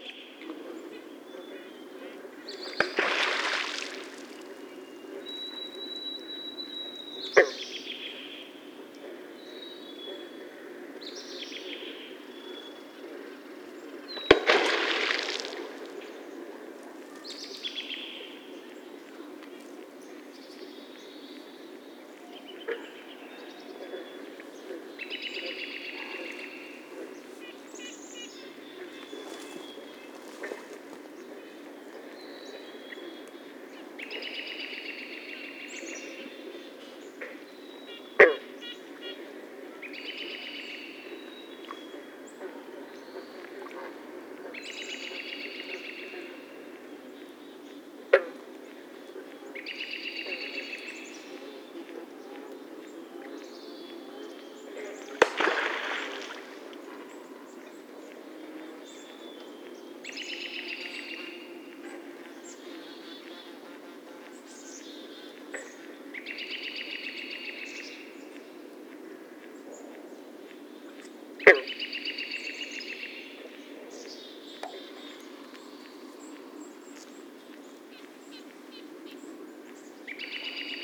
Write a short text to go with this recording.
Pond ambience including several beaver tail warning slaps. Frogs interject. A sawyer beetle larva is chewing away on a log nearby. Telinga stereo parabolic microphone with Tascam DR-680mkII recorder.